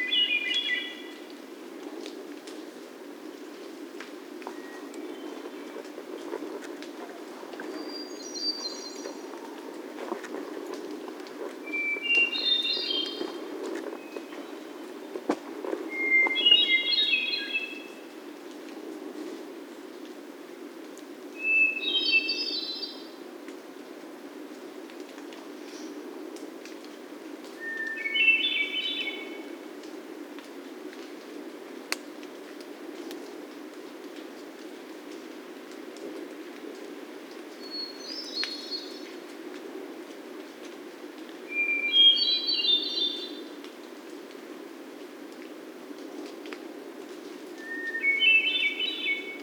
Mikisew Provincial Park, Canada - Hermit thrushes
Hermit thrushes calling in the woods, at the end of a beaver pond. Telinga stereo parabolic mic with Tascam DR-680mkII recorder. EQ and levels post-processing.